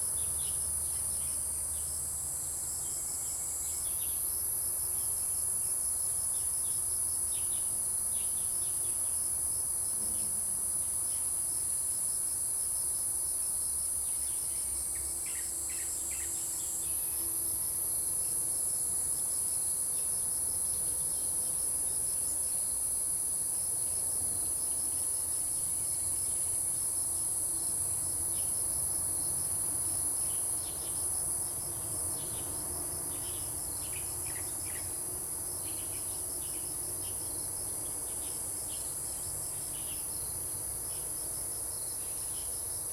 組合屋生態池, 埔里鎮桃米里 - Birds singing
Birds singing, Traffic Sound, Ecological pool
Zoom H2n MS+XY
Puli Township, 桃米巷16號, 13 August 2015